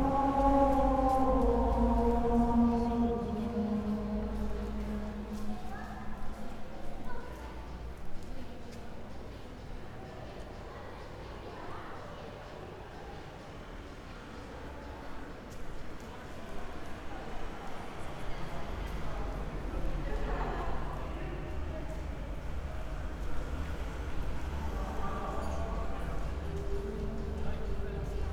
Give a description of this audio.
church with open doors at night, out and inside merge ...